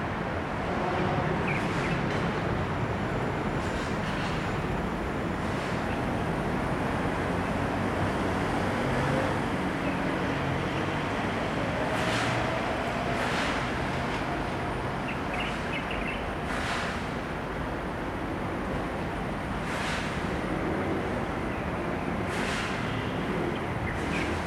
Liancheng Rd., Zhonghe Dist., New Taipei City - Beside the river
Beside the river, Traffic Sound, Birds singing, The sound of the construction site
Sony Hi-MD MZ-RH1 +Sony ECM-MS907
New Taipei City, Taiwan